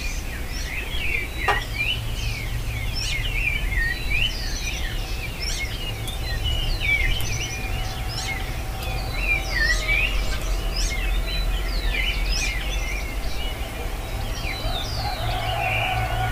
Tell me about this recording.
SOnidos de un amanecer en la Calera.